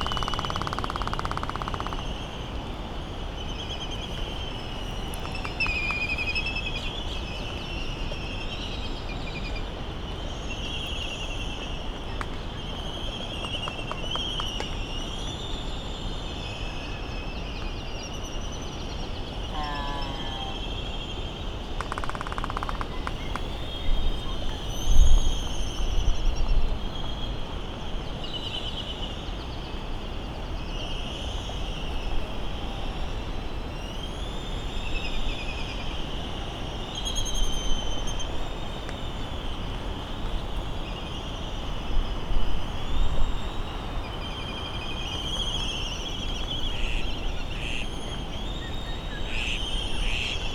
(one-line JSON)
{"title": "Hawaiian Islands, USA - Seep soundscape ...", "date": "2012-03-18 16:29:00", "description": "Seep ... Sand Island ... Midway Atoll ... grey very windy day ... birds calling ... laysan duck ... laysan albatross calls and bill clapperings ... canaries ... red-tailed tropic bird ... open lavalier mics ...", "latitude": "28.21", "longitude": "-177.37", "altitude": "13", "timezone": "Pacific/Midway"}